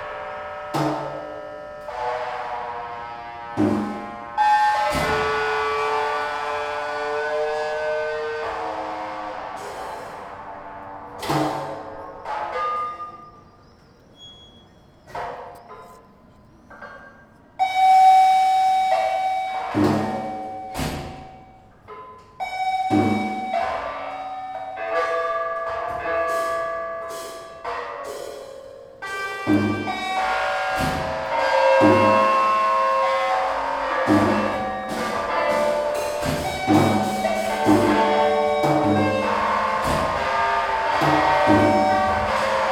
Stare Miasto, Wrocław, Polska - Musikkonstruktionsmachine
Musical sculpture "Musikkonstruktionsmachine" by Niklas Roy